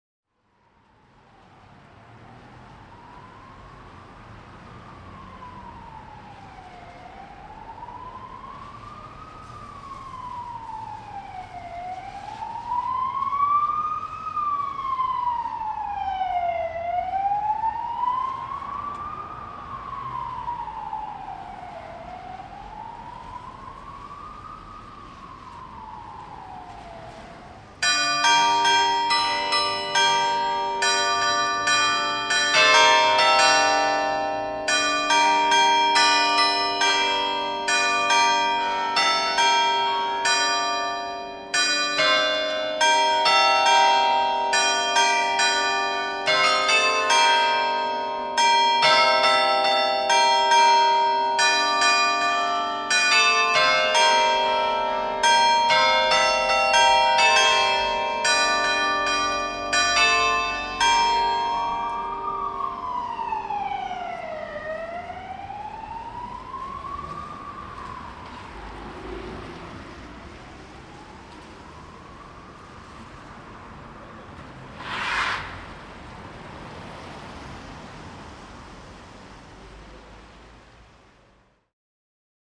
Bruxelles - Belgique
Carillon de la rue du Mont des Arts
18h
Région de Bruxelles-Capitale - Brussels Hoofdstedelijk Gewest, België / Belgique / Belgien